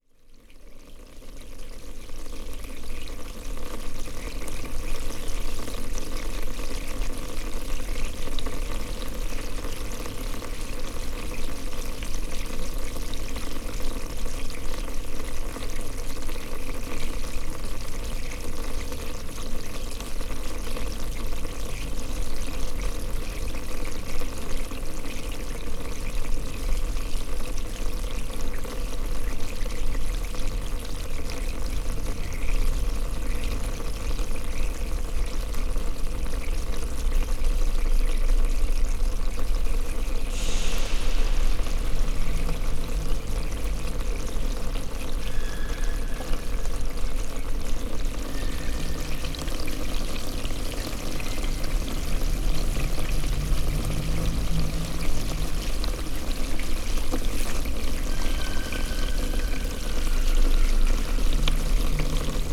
Centre, Ottignies-Louvain-la-Neuve, Belgique - Underground pipe
Louvain-La-Neuve is an utopian city, where surface is pedestrian and underground is sights to cars. Also, the underground places are crossed with myriad of big pipes ; inside there's water, drains, gas, electricity, etc... This is a recording of one of these pipes.
24 March 2016, 16:30